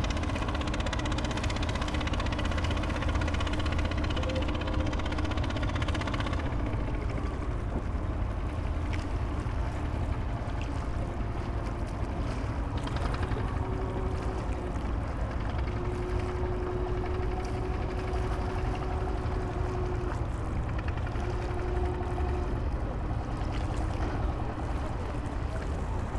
Baoyang Branch Rd, Baoshan Qu, Shanghai Shi, China - Noisy barge in cruise terminal
A simple barge steered by one man and driven by an old retrofitted motor, used to transport passengers in the terminal. We can hear the change of gears. Sound of nearby clapping water
Une barge rudimentaire, pilotée par un homme et équipé d’un ancien moteur, bruyant. La barge est utilisée pour transporter des passagers dans le terminal. On peut entendre les changements de vitesse du moteur. Bruit d’eau sur la berge.